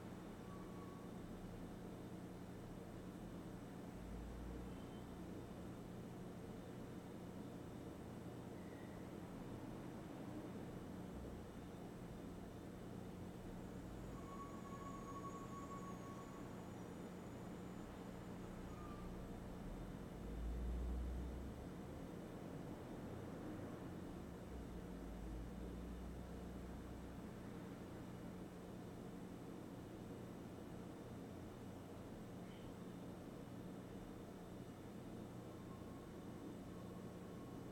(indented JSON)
{
  "title": "Norma Triangle, West Hollywood, Kalifornien, USA - Home Sound",
  "date": "2013-12-30 15:20:00",
  "description": "829, North San Vicente Boulevard, Backyard of the Apartement Compound, early afternoon. Distant City sounds, birds and A/C sound. Zoom Recorder H2n",
  "latitude": "34.09",
  "longitude": "-118.38",
  "altitude": "79",
  "timezone": "America/Los_Angeles"
}